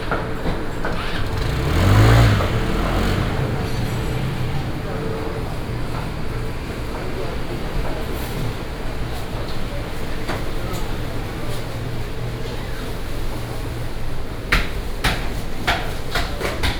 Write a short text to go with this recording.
Underground floor, Meat wholesale market, Binaural recordings, Sony PCM D100+ Soundman OKM II